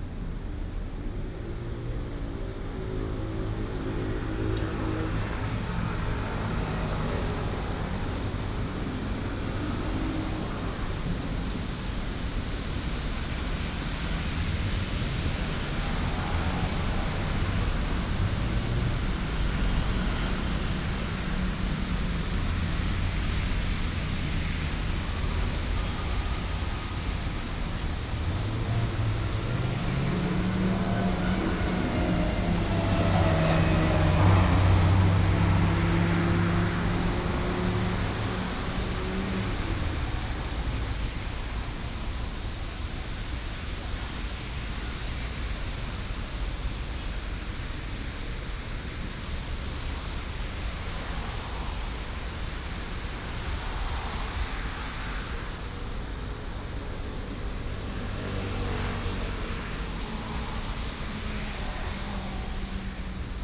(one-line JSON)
{"title": "田寮河, Ren’ai Dist., Keelung City - Sitting in the river", "date": "2016-07-18 14:29:00", "description": "Sitting in the river, Traffic Sound, Thunderstorms", "latitude": "25.13", "longitude": "121.75", "altitude": "10", "timezone": "Asia/Taipei"}